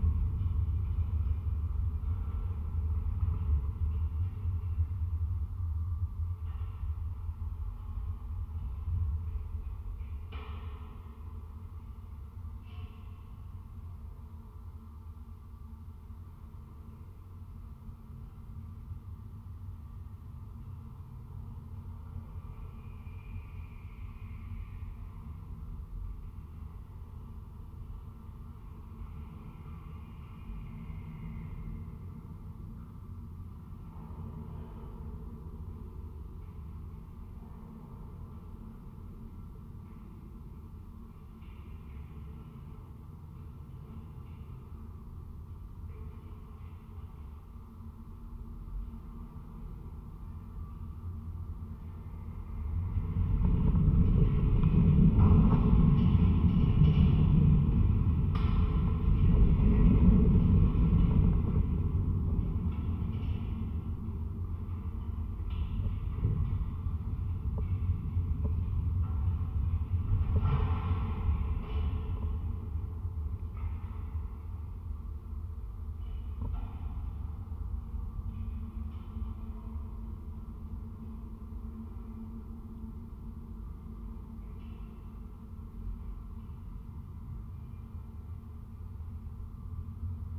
Utena, Lithuania, fence at abandoned building
abandoned building (20 years ago it was cult coffee) in the middle of the town. contact mics on the fence surrounding the building